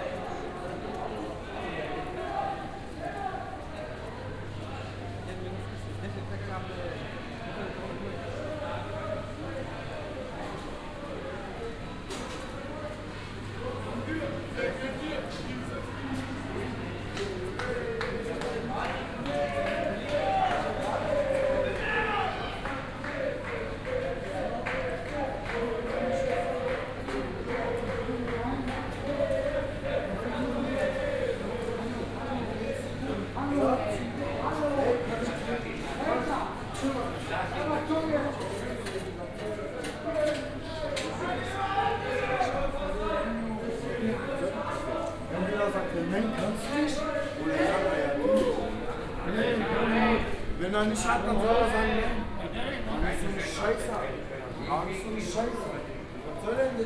bahnhof / station alexanderplatz - saturday night ambience
bahnhof / station alexanderplatz, saturday night ambience, rude atmosphere, soccer fans shouting and singing
Berlin, Germany, 9 January 2010, 23:00